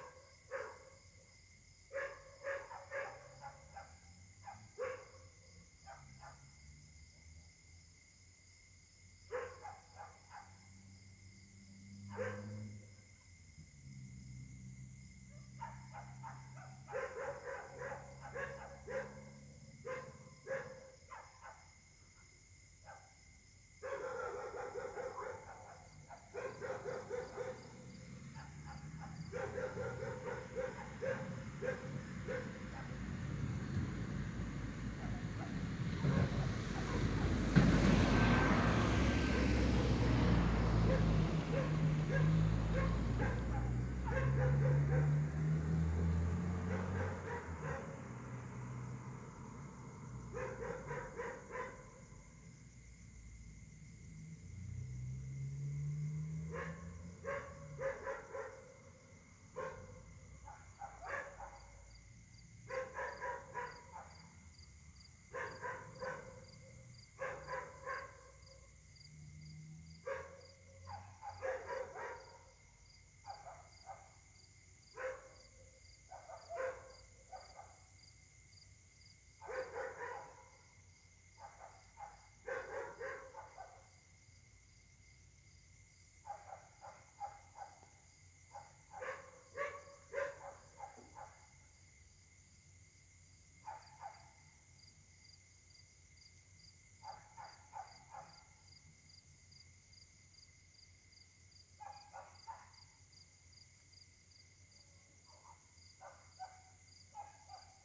{"title": "Caltex, mares, Réunion - 2019-01-20 23h08", "date": "2019-01-20 23:08:00", "description": "La nuit s'annonce difficile pour les habitants: concert de chiens.\nMicro: smartphone Samsung Galaxy s8, le micro de gauche tend à être encrassé. Essai pour voir si c'est acceptable.", "latitude": "-21.14", "longitude": "55.47", "altitude": "1214", "timezone": "Indian/Reunion"}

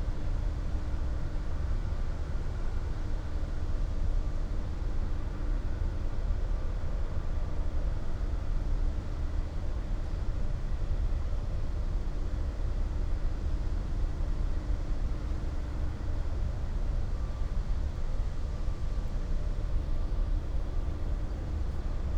{"title": "cemetery, Chorzów Power Station - power station hum", "date": "2019-05-22 11:05:00", "description": "redundant power station hum, heard on the nearby cemetery. Chorzów power station is a thermal power plant located in Chorzów, Silesian Voivodeship, Poland. It has been in operation since 1898, since 2003 under the name Elcho.\n(Sony PCM D50, DPA4060)", "latitude": "50.31", "longitude": "18.97", "altitude": "303", "timezone": "Europe/Warsaw"}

